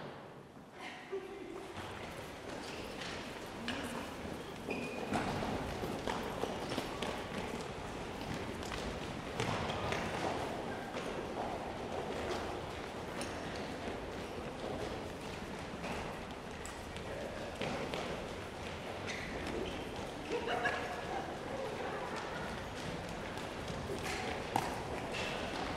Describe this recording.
The performance, that involves the audience, were attended by 72 people. You hear parts of them shouting and humming.